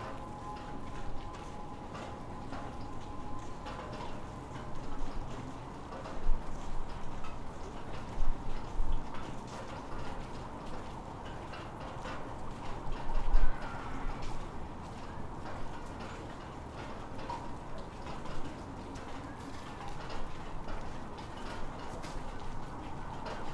ул. 50-летия ВЛКСМ, Челябинск, Челябинская обл., Россия - Chelyabinsk, Russia, evening, passers-by walking in the snow, passing cars
Chelyabinsk, Russia, evening, passers-by walking in the snow, passing cars
recorded Zoom F1 + XYH-6